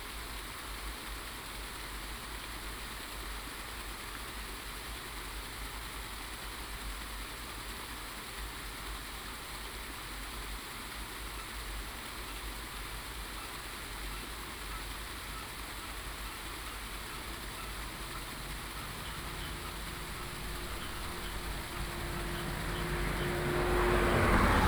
保生村, Fangliao Township, Pingtung County - Beside the fish pond
Late night on the street, Traffic sound, Beside the fish pond, Frog croak